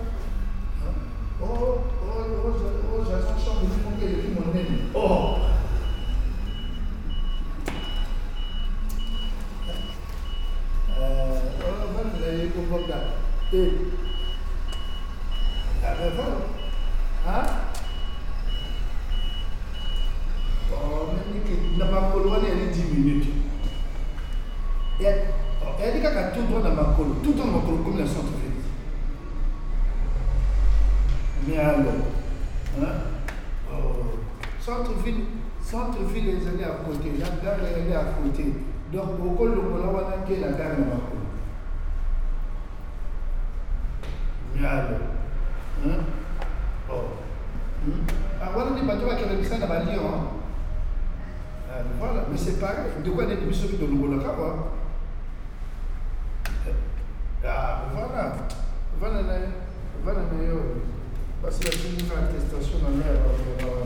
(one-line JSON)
{"title": "Montereau-Fault-Yonne, France - Montereau station", "date": "2016-12-28 17:50:00", "description": "People discussing with their phone in the Montereau station. Bla-bla-blaaa blablabla...", "latitude": "48.38", "longitude": "2.94", "altitude": "49", "timezone": "GMT+1"}